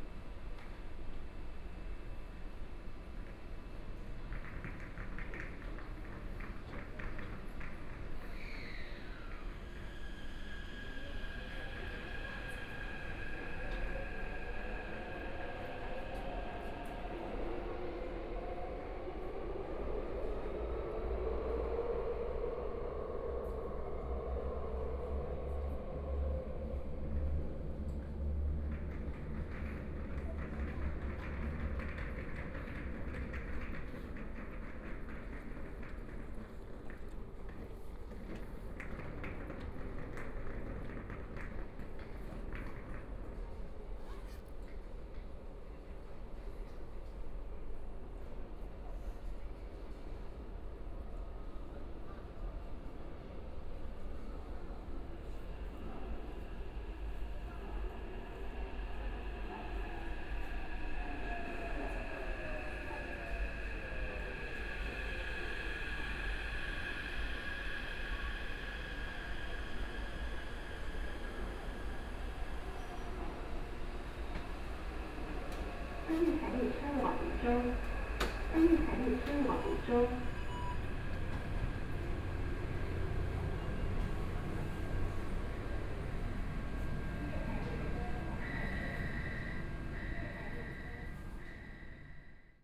in the Station, On the platform, Binaural recordings, Zoom H4n+ Soundman OKM II
6 February 2014, 16:55